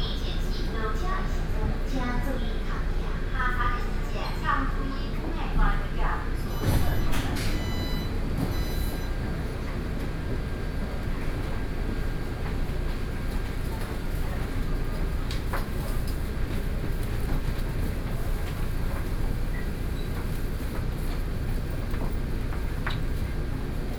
{"title": "Xindian Line (Taipei Metro), Taipei City - Xindian Line", "date": "2013-07-31 20:58:00", "description": "from Taipower Building to Taipei Main Station, Sony PCM D50 + Soundman OKM II", "latitude": "25.03", "longitude": "121.52", "altitude": "22", "timezone": "Asia/Taipei"}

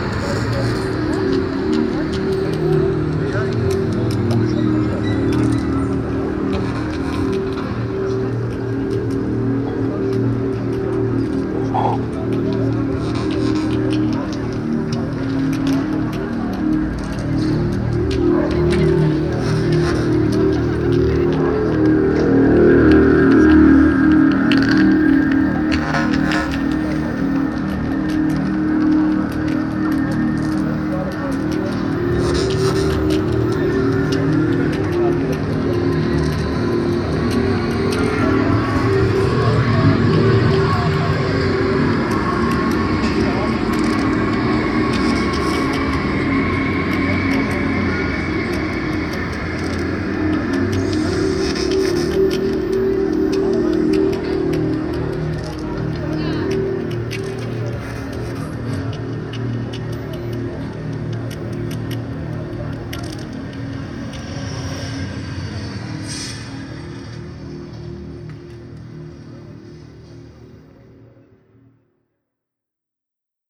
{"title": "Stadtkern, Essen, Deutschland - essen, willy brandt square, sound installation", "date": "2014-03-29 16:00:00", "description": "Auf dem Willy Brandt Platz an einem sonnigen Frühlings-Samstag nachmittag. Klangausschnitt der mehrkanaligen Klanginstallation, eingerichtet für das Projekt Stadtklang //: Hörorte - vor der mobilen Aufnahmestation - einem Bus der EVAG\nweitere Informationen zum Projekt hier:\nAt the Willy Brandt square on a sunny springtime saturday. Excerpt of the soudn of a sound installation for the project Stadtklang //: Hörorte - in front of the mobile recording station - an EVAG City bus.\nProjekt - Stadtklang//: Hörorte - topographic field recordings and social ambiences", "latitude": "51.45", "longitude": "7.01", "altitude": "93", "timezone": "Europe/Berlin"}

